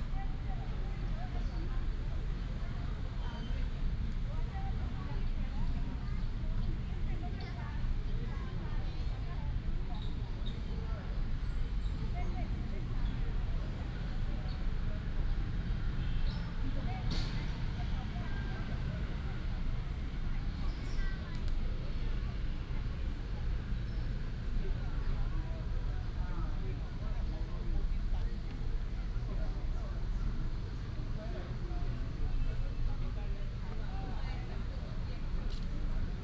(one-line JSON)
{"title": "兒二環保公園, Luzhu Dist., Taoyuan City - in the Park", "date": "2017-08-01 16:25:00", "description": "in the Park, Old man and child, Footsteps, traffic sound", "latitude": "25.02", "longitude": "121.26", "altitude": "73", "timezone": "Asia/Taipei"}